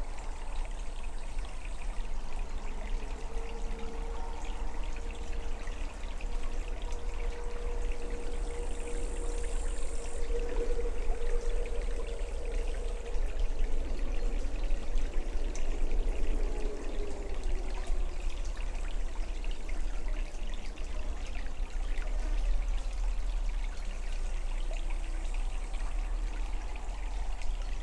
Utena, Lithuania, a view to a stream
little streamlet omthe side of the city
2 August 2018, 18:50